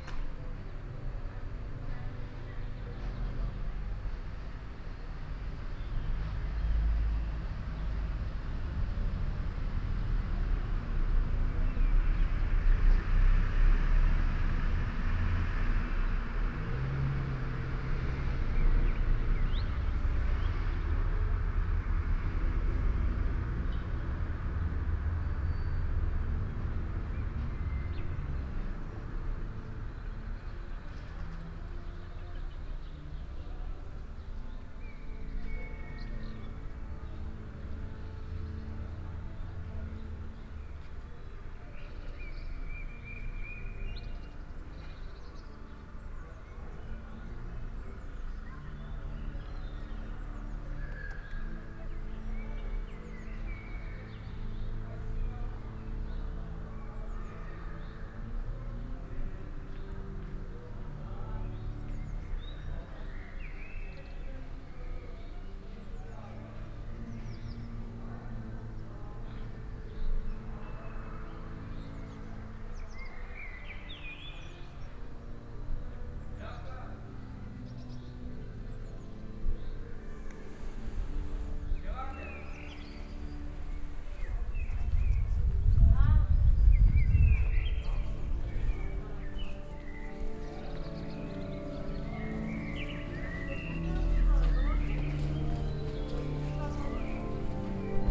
St Josef, Hamm, Germany - outside the church walking in
lingering for a moment outside the church, quiet and birds over the playground across the street, while activities picking up at nearby Victoria square, sounds of the church organ from inside, I walk in through the open doors….